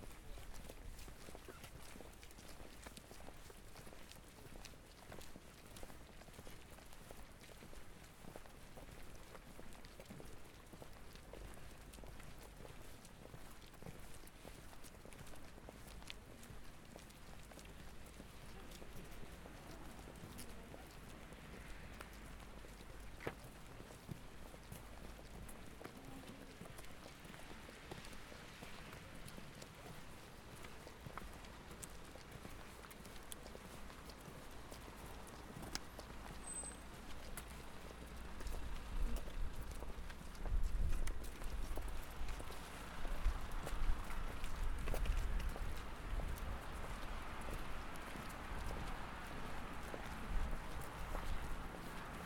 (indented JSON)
{
  "title": "Kalamaja park (former cemetery) - A sonic walk and deep listening to Kalamaja 1 (from Kai Center)",
  "date": "2019-11-03 15:54:00",
  "description": "Recorded with a Zoom H4N Pro, pointed at the ground while walking together with 17 other people\nA sonic walk and deep listening to Kalamaja - organised by Kai Center & Photomonth, Tallinn on the 3rd of November 2019.\nElin Már Øyen Vister in collaboration with guests Ene Lukka, Evelin Reimand and Kadi Uibo.\nHow can we know who we are if we don't know who we were?... History is not the story of strangers, aliens from another realm; it is the story of us had we been born a little earlier.\" - Stephen Fry",
  "latitude": "59.45",
  "longitude": "24.73",
  "altitude": "15",
  "timezone": "Europe/Tallinn"
}